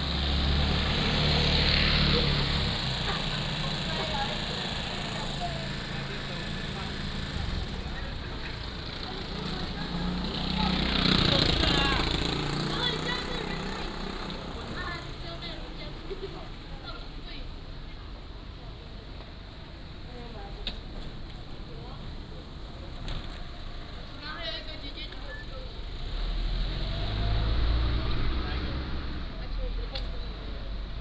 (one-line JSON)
{"title": "椰油村, Koto island - In front of the restaurant", "date": "2014-10-28 17:35:00", "description": "In front of the restaurant, Small tribes, Traffic Sound", "latitude": "22.05", "longitude": "121.51", "altitude": "19", "timezone": "Asia/Taipei"}